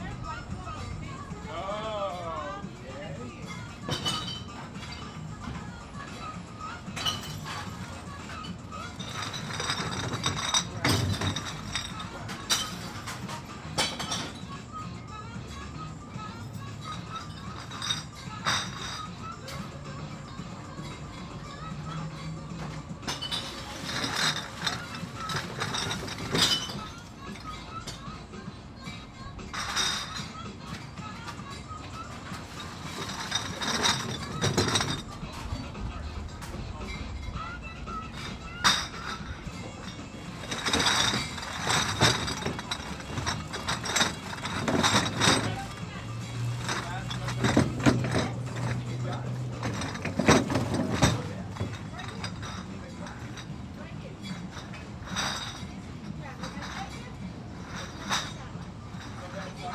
beer bottle recycling worth $11.43